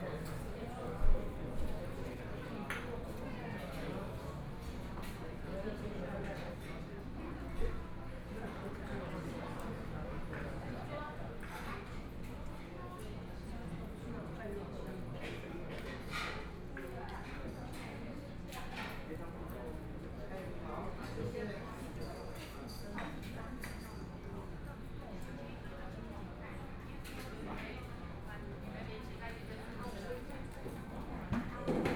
Zhongzheng Rd., Taitung - In the restaurant
In the restaurant, Binaural recordings, Zoom H4n+ Soundman OKM II